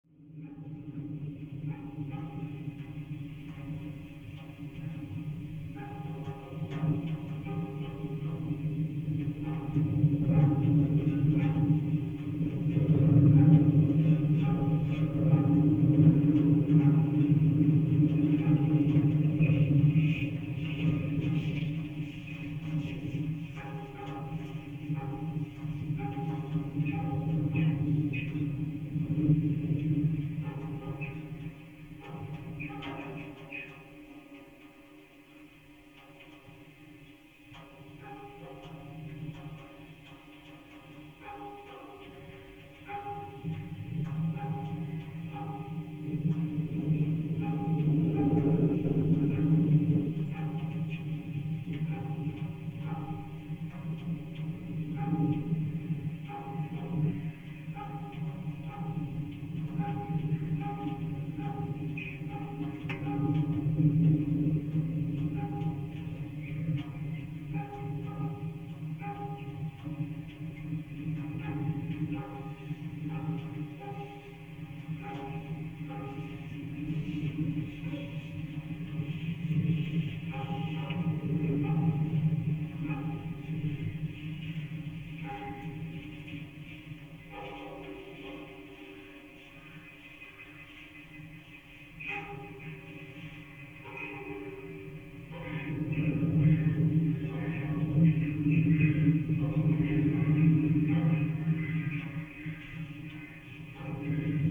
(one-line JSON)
{"title": "Sabaldauskai, Lithuania, an empty tank", "date": "2013-09-29 14:50:00", "description": "big empty metallic tank found in the meadow...the metal catches distant dog's bark", "latitude": "55.46", "longitude": "25.60", "altitude": "123", "timezone": "Europe/Vilnius"}